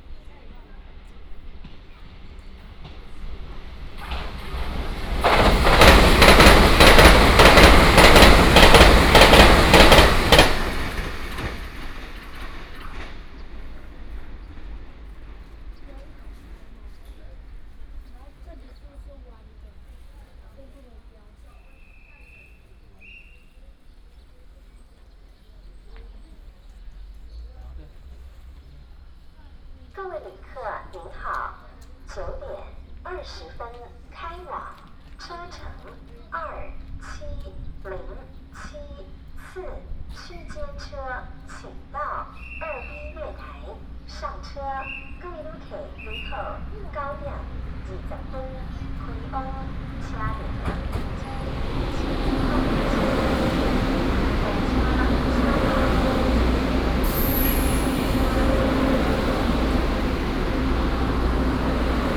Ershui Station, Changhua County - At the station platform
At the station platform, The train passed, The train arrived at the station, lunar New Year
Binaural recordings, Sony PCM D100+ Soundman OKM II
Changhua County, Ershui Township, 光聖巷25號, 15 February 2018